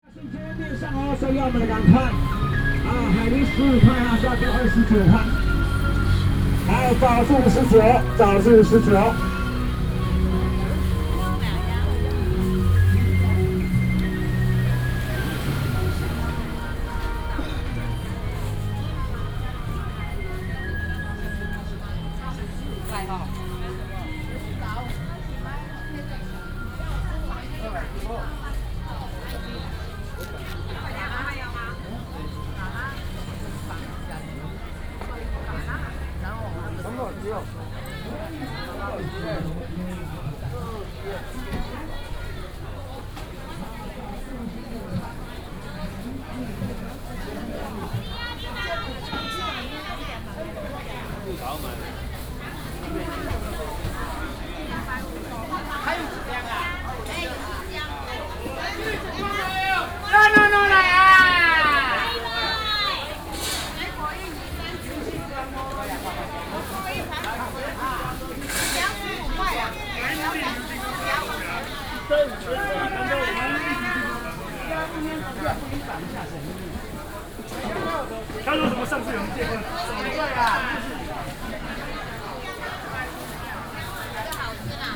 Minsheng St., Hukou Township - vendors selling voice
All kinds of vendors selling voice, walking In the Market
Hsinchu County, Taiwan